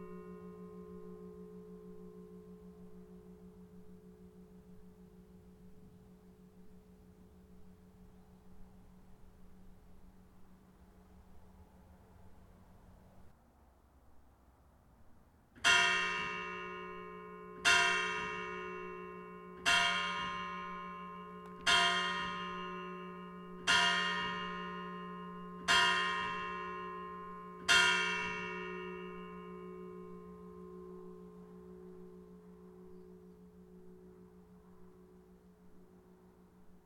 Imp. de Leglise, Chénelette, France - Chénelette - clocher
Chénelette - Rhône
clocher - 19h + Angélus
France métropolitaine, France, 26 August 2018, 19:00